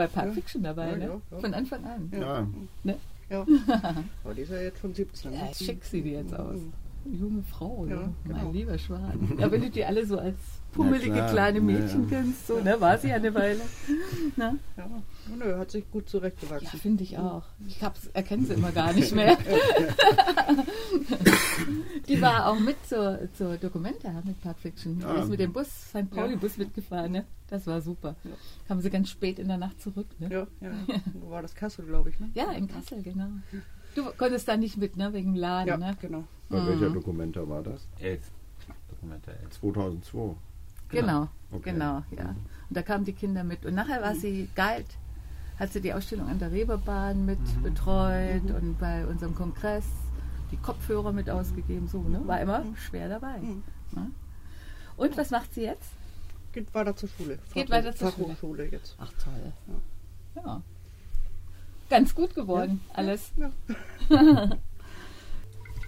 Harrys Hamburger Hafenbasar
Aus der Serie "Immobilien & Verbrechen". Die geheimen Kellersysteme von St. Pauli und ihre Erfinderinnen.
Keywords: Gentrifizierung, St. Pauli, Chinatown, Hafenstraße, NoBNQ - Kein Bernhard Nocht Quartier
Hamburg, Germany, 31 October